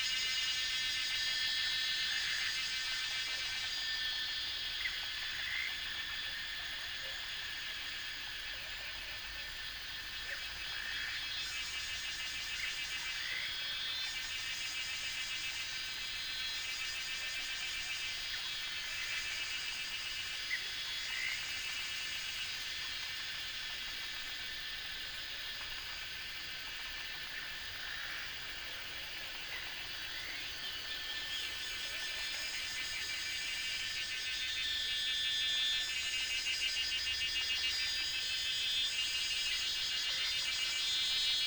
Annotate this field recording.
Cicada sounds, Bird sounds, Frog sounds